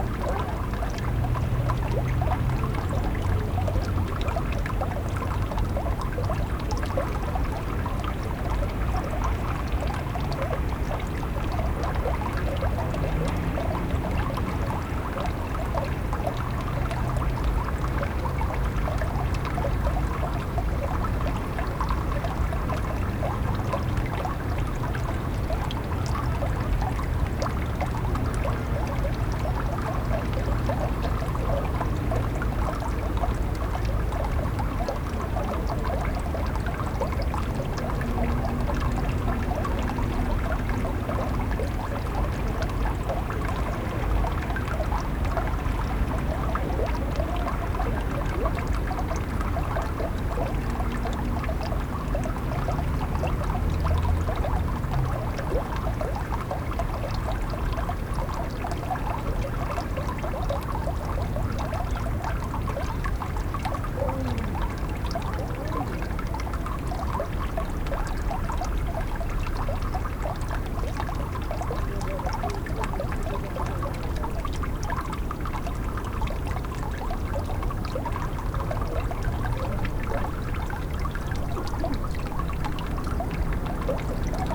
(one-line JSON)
{"title": "berlin: landwehrkanal - the city, the country & me: landwehrkanal", "date": "2013-01-28 16:37:00", "description": "melt water runs down into landwehrkanal\nthe city, the country & me: january 28, 2013", "latitude": "52.49", "longitude": "13.44", "timezone": "Europe/Berlin"}